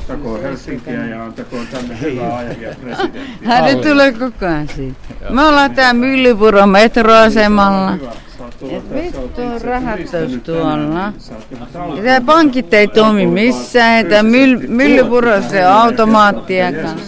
Myllypuron metroasema, Helsinki, Suomi - City Mission praising the Lord at the Metro Station
City Mission praising the Lord at the Metro Station.